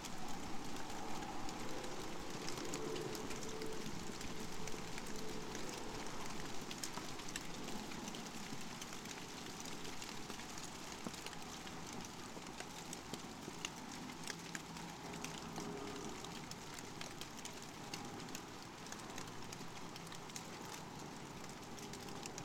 Vyžuonos, Lithuania, abandoned warehouse, rain
abandoned, half ruined warehouse. it's raining. microphones near the roof
Utenos apskritis, Lietuva, 13 September 2019